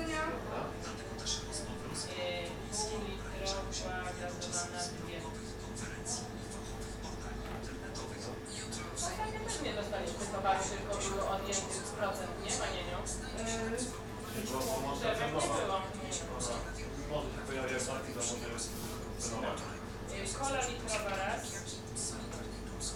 Srem, Hospital, snack bar - placing order
recorded in the back room of the hospital buffet, near a row of refrigerators, radio playing, shop assistant greats customers, owner places order for beverages.
województwo wielkopolskie, Polska, European Union